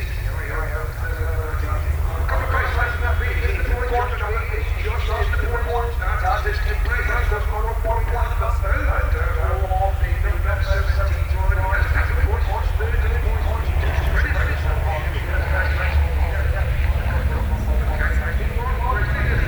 Lillingstone Dayrell with Luffield Abbey, UK - british motorcycle garnd prix 2013 ...
moto3 race 2013 ... warm up lap and first few race laps ... lavalier mics ...